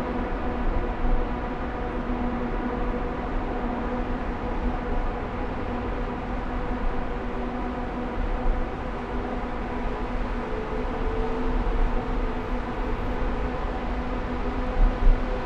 Tehran Province, Tehran, Resalat Tunnel, Iran - Resalat tunnel